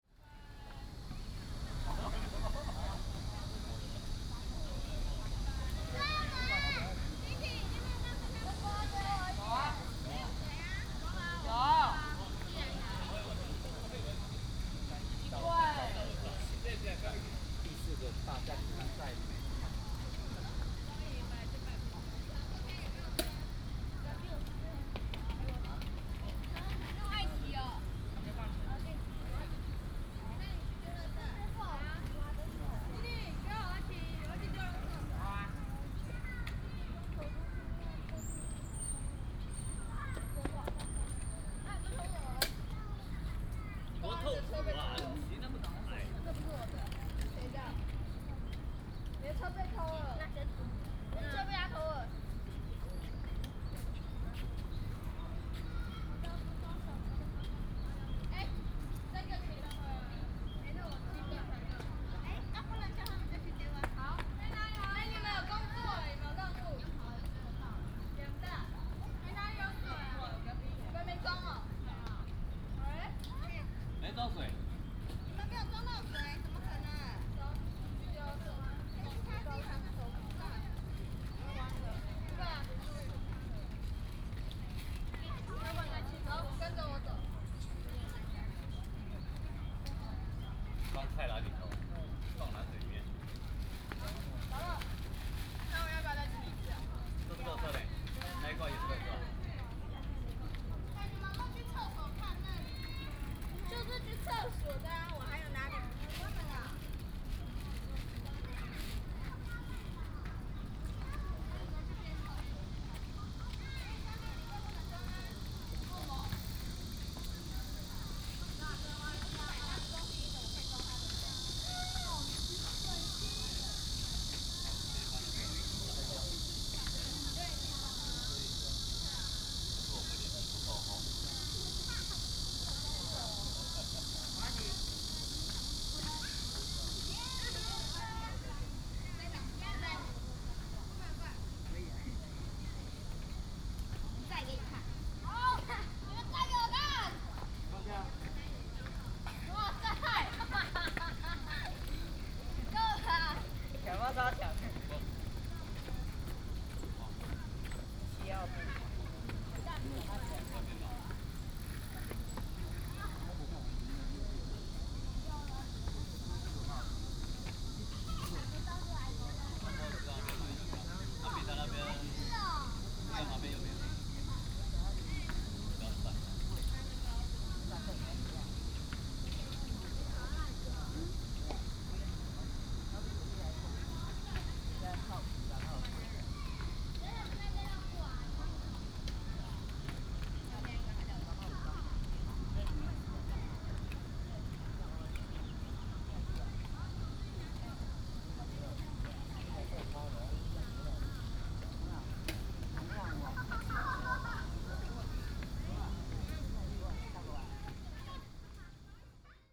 臺灣大學, Taiwan - In the University Square
In the University Square, Holiday Many tourists, Very hot weather, Cicadas cry
Taipei City, Taiwan, 28 June 2015, ~17:00